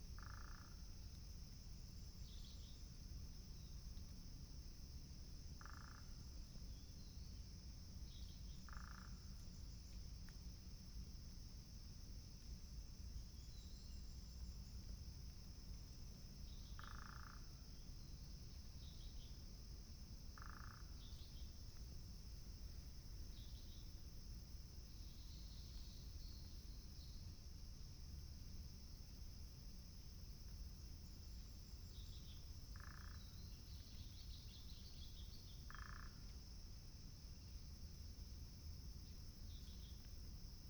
{"title": "水上巷, Puli Township, Nantou County - Birds", "date": "2016-04-21 07:17:00", "description": "Traffic Sound, Bird sounds", "latitude": "23.94", "longitude": "120.92", "altitude": "593", "timezone": "Asia/Taipei"}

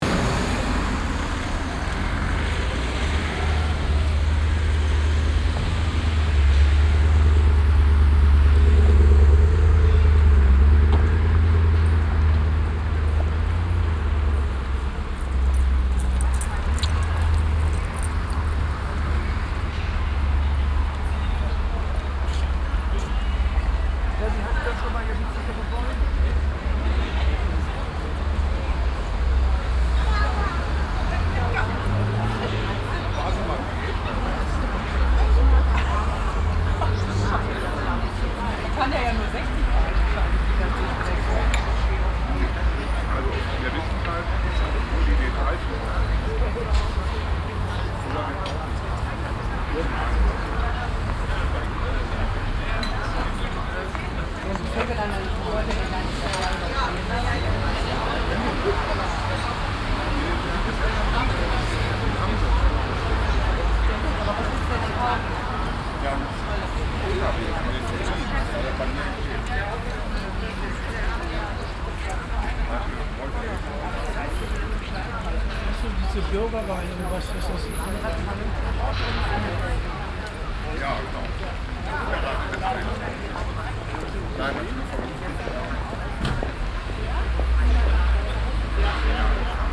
{"title": "Rüttenscheid, Essen, Deutschland - essen, rüttenscheider str, cafe", "date": "2014-04-26 11:10:00", "description": "Innerhalb eines der vielen Strassencafes die sich in diesem teil der Straße befinden. der Klang von Stimmen und Barmusik.\nInside one of the many street cafe's that are located on this street. The sound of voices and bar music.\nProjekt - Stadtklang//: Hörorte - topographic field recordings and social ambiences", "latitude": "51.44", "longitude": "7.01", "altitude": "119", "timezone": "Europe/Berlin"}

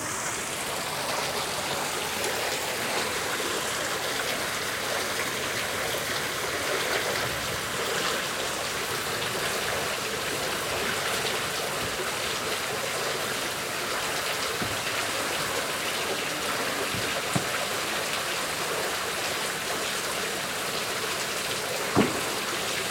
{"title": "Gordon Promenade E, Gravesend, UK - Gravesend End", "date": "2021-08-20 16:30:00", "description": "Walking to Gravesend Canal Basin, at River Thames end of the Thames and Medway Canal.", "latitude": "51.44", "longitude": "0.38", "altitude": "5", "timezone": "Europe/London"}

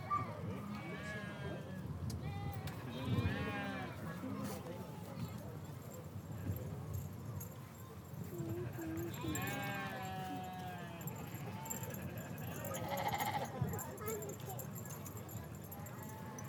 {"title": "Voe, Shetland Islands, UK - Shetland sheep at the Voe & District Agricultural Show", "date": "2013-08-03 13:30:00", "description": "This is the sound of the shetland sheep at the Voe Show in Shetland. Like most of Shetland, this is a treeless region, so there is a lot of wind. Although this makes for a blowy recording, it also means that you can hear rosette ribbons won by different sheep fluttering in the breeze! The sheep are all in pens, and are grouped together as rams, ewes, and lambs. There are three main prizes in each category. Shetland sheep are the backbone of the Shetland wool industry, and - judging by the beautifully stacked and very desirable fleeces just a small distance away in the wool tent - most of the animals in this recording will have their fleeces counted amongst the Shetland wool clip.", "latitude": "60.36", "longitude": "-1.26", "altitude": "78", "timezone": "Europe/London"}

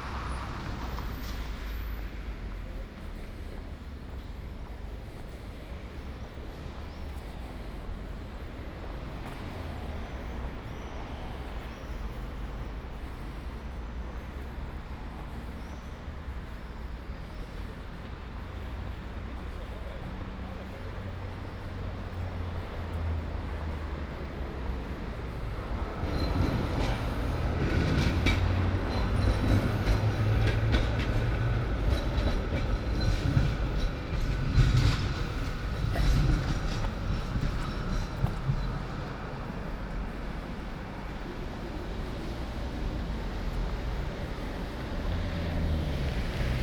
{"date": "2020-05-19 20:36:00", "description": "\"Coucher de soleil au parc Valentino, rive droite du Pô, deux mois après, aux temps du COVID19\": soundwalk\nChapter LXXXI of Ascolto il tuo cuore, città. I listen to your heart, city\nTuesday, May 19th 2020. San Salvario district Turin, to Valentino, walking on the right side of Po river and back, two months after I made the same path (March 19), seventy days after (but day sixteen of Phase II and day 2 of Phase IIB) of emergency disposition due to the epidemic of COVID19.\nStart at 8:36 p.m. end at 9:25 p.m. duration of recording 48’41”. Local sunset time 08:55 p.m.\nThe entire path is associated with a synchronized GPS track recorded in the (kmz, kml, gpx) files downloadable here:", "latitude": "45.06", "longitude": "7.69", "altitude": "220", "timezone": "Europe/Rome"}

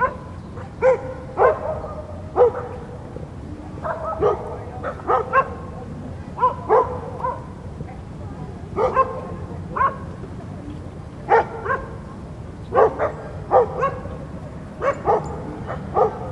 {"title": "Barking dogs, van Stolkpark Den Haag", "date": "2010-07-19 13:02:00", "description": "Barking dogs in the distance.\nZoom H2 recorder", "latitude": "52.10", "longitude": "4.29", "altitude": "14", "timezone": "Europe/Amsterdam"}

